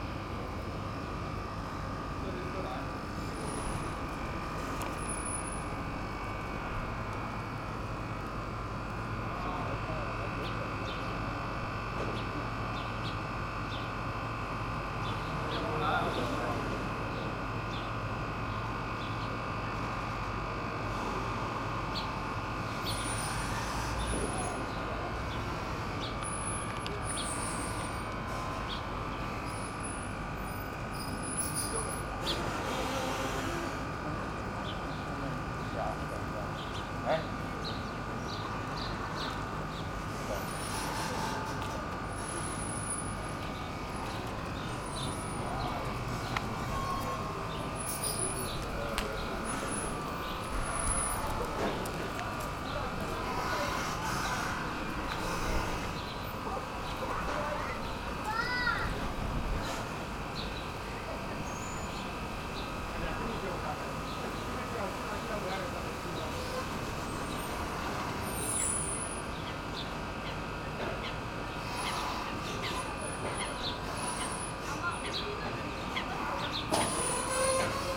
Berlin, Eichenstr. - restaurant boat at the quai
Berlin Eichenstr., entrance area for restaurant boat Hoppetosse, fridge generator, boat squeaking and creaking at the quai wall, wind